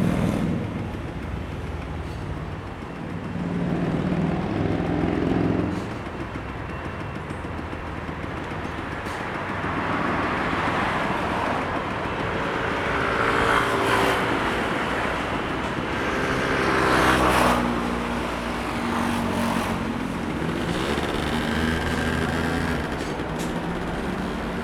Ciaotou, Kaohsiung - Corner
Traffic Noise, Sony ECM-MS907, Sony Hi-MD MZ-RH1
高雄市 (Kaohsiung City), 中華民國, 29 March